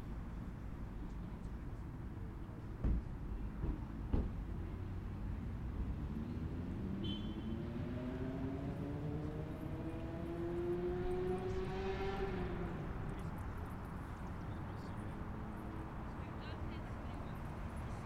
Sitting in the grass at Acacia Park, cars and normal human interaction can be heard. Recorded with ZOOM H4N Pro with a dead cat.
CO, USA, 14 May 2018